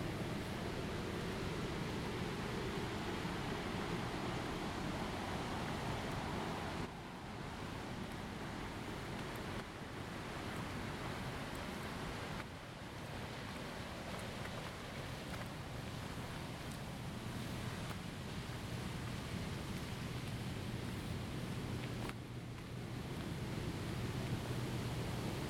This is the sound of electricity being made at DeCew Generating Station 1. The sound was recorded on an H2n mounted on a monopod as I walked from one end of the power house to the other, then opened a door to outside. The file is unaltered and in real time as I walk by the beautiful old machinery on a thick concrete floor built from on site river rock. DeCew 1 is the oldest continually running hydroelectric generating station in Canada, built in 1898 and one of the first uses in the world of Nikola Tesla’s polyphase current. The water source is a man-made reservoir fed by the Welland Canal at the top of the Niagara Escarpment, Lake Gibson, and the discharge is The Twelve Mile Creek that opens to Lake Ontario. This recording was made thanks to the Ontario Power Generation employee who preferred to be anonymous and was used in the audio program for the installation, Streaming Twelve, exhibited at Rodman Hall Art Centre.